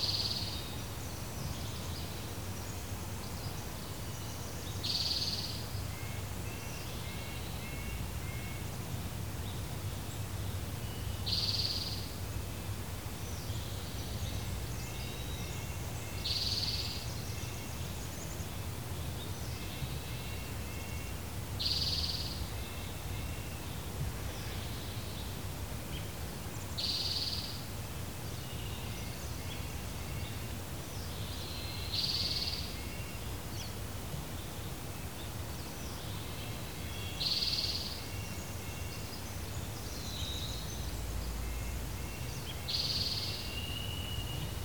Some people are night owls, some are early birds -- it's genetic; you can't help what you are. I find the early morning wonderfully fresh and inviting, with the trees full of life in the morning sun -- and very little else moving.
Major elements:
* Birds (crows, starlings, chickadees, seagulls, finches, an owl, a woodpecker, and several others I can't identify)
* Cars and trucks
* Airplanes (jet and prop)
* Dogs
* A rainshower ends the recording session
Washington, United States of America, April 1999